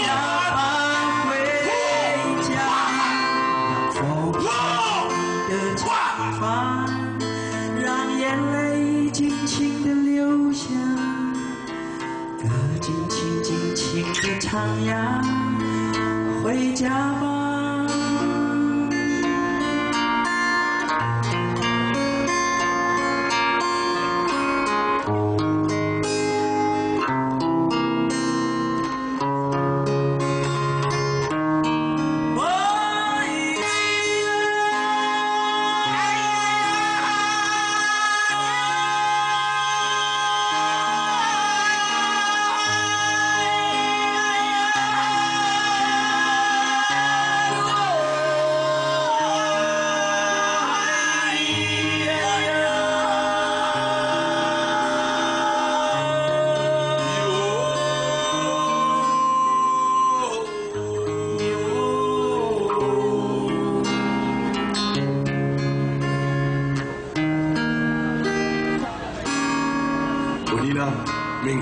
Ketagalan BoulevardTaiwan aborigines protest, Aboriginal singer, Sony ECM-MS907, Sony Hi-MD MZ-RH1